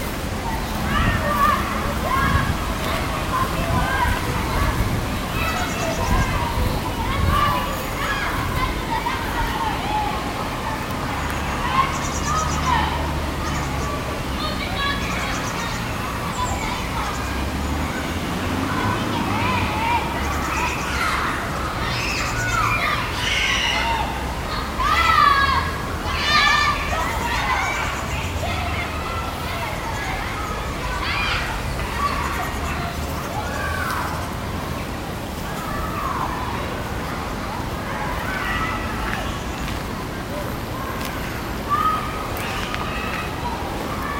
recorded june 23rd, 2008.
project: "hasenbrot - a private sound diary"
Lippstadt, Germany